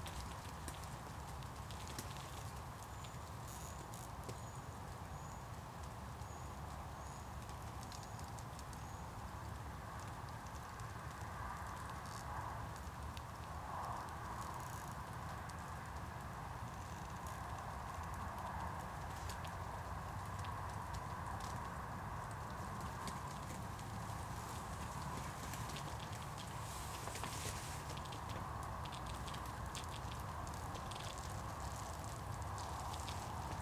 Joneliskes, Lithuania, in the tipi tent
first part: listening inside the tipi, second part - listening vibrations with contact mics
23 September 2018